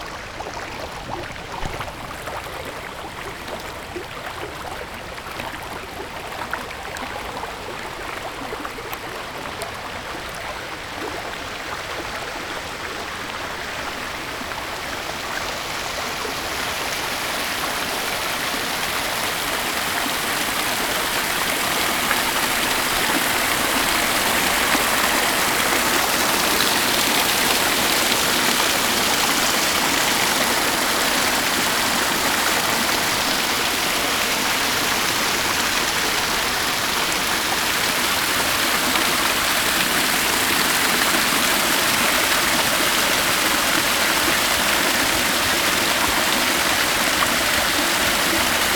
Washington Park, South Doctor Martin Luther King Junior Drive, Chicago, IL, USA - fountain